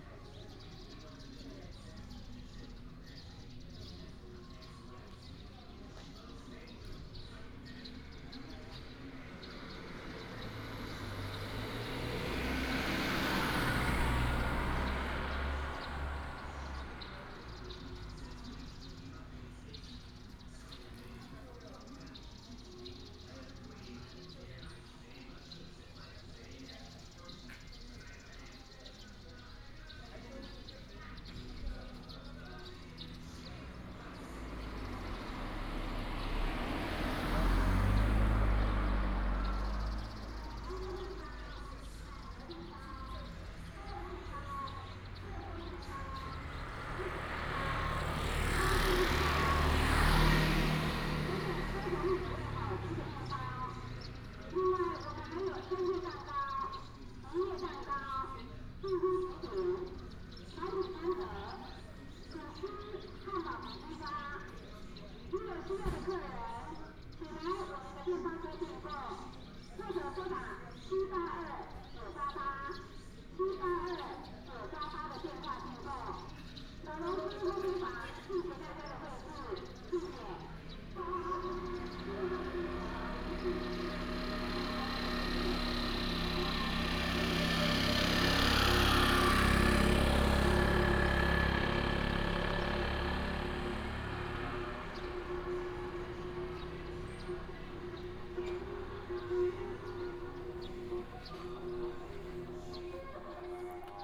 Village street corner, traffic sound, Karaoke, Bird cry, Bread vendor